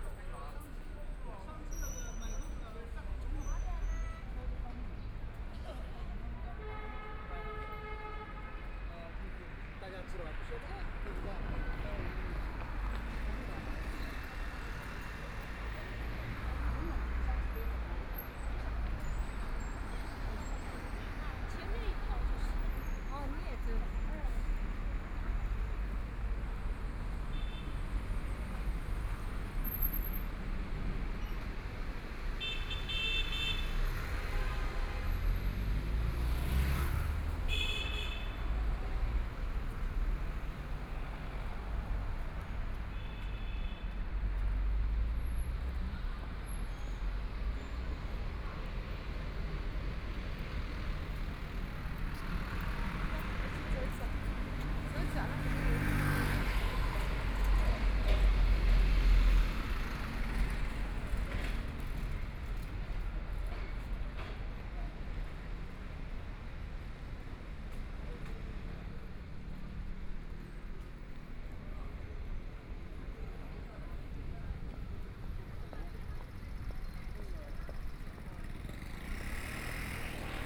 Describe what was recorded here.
Walking on the street, Traffic Sound, Binaural recording, Zoom H6+ Soundman OKM II